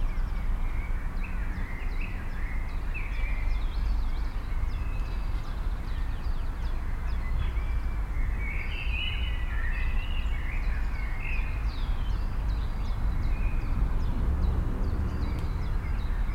Kronshagen, Deutschland - Sunday late afternoon
Spring, Sunday, late afternoon in an urban residential district. A plane, birds, cars, a motorcycle, a few people in a distance. Binaural recording, Soundman OKM II Klassik microphone with A3-XLR adapter and windshield, Zoom F4 recorder.